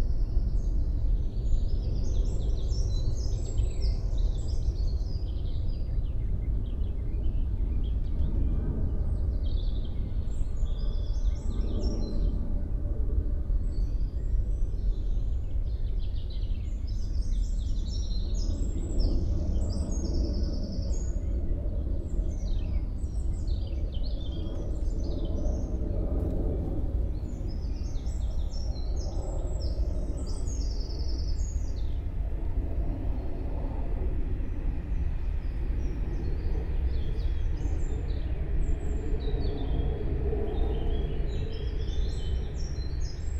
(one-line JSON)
{"title": "Goussainville, France - Dead city", "date": "2018-05-01 08:28:00", "description": "Simply think this could be our home. It would be only the right words I could use.\nGoussainville Vieux-Pays is the name of this village. It's nearly a dead city.\nDuring the year 1973, ADP (meaning Paris Airports) built the Roissy airport. Goussainville Vieux-Pays is exactly below the called '27L' take-off runway of the airport. The area is classified as an \"intense noise\" landscape. All the year 1973, ADP made proposals to buy the houses, double price compared to the normal price. Initially populated 1000 inhabitants, a large part of the village moved. On the same time, the 3 June 1973, the Tupolev plane Tu-144S CCCP-77102 crashed just near the old village, on the occasion of Bourget show, destroying a school. It made a large trauma.\nDuring the 1974 year, 700 inhabitants leaved. All houses were walled with blocks. But 300 inhabitants absolutely refused to leave. Actually, Goussainville Vieux-Pays is a strange landscape. Nothing moved during 44 years.", "latitude": "49.01", "longitude": "2.46", "altitude": "82", "timezone": "Europe/Paris"}